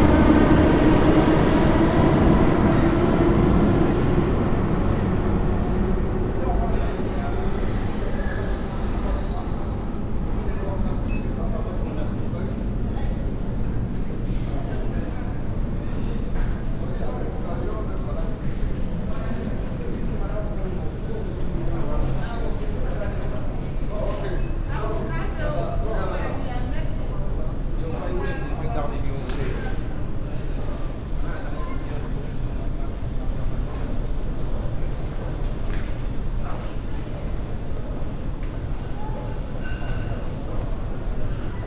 paris, rer station, val de fontenay
in as subway station - train leaves, another arrives
cityscapes international: socail ambiences and topographic field recordings